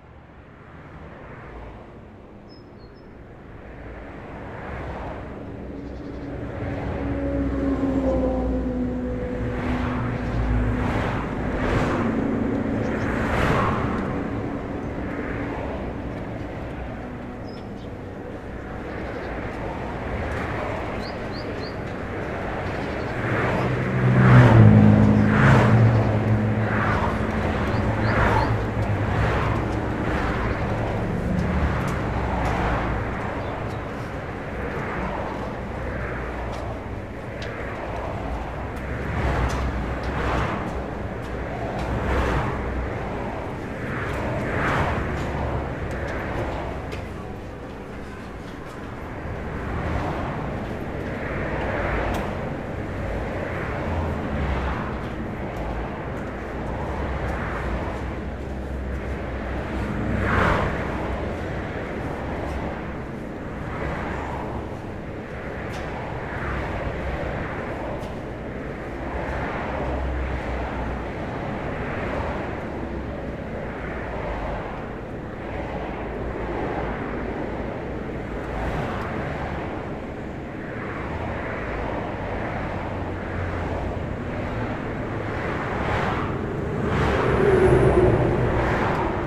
Toulouse, France - crossing under the highway
in the centre of the pedestrian crossing under the highway
Captation : ZOOMH6